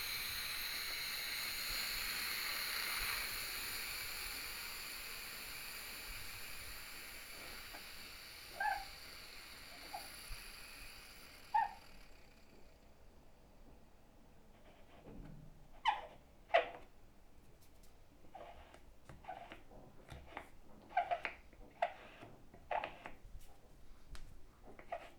opening the valve of a steam cleaner. the smoothness of the escaping steam sounds fantastic. i really like the soothing quality of it. the sound is velvet and comforting but the steam is scalding and rough. in the second part of the recording the creaking sound of the tarnished thread of the valve.